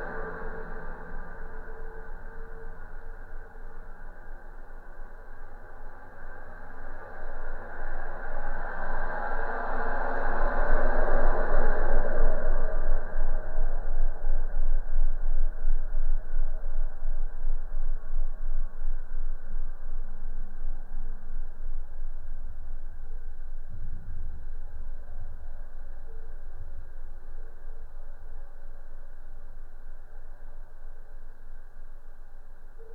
Jasonys, Lithuania, inner sounds of viaduct
contact microphones on metalic constructions of abandoned viaduct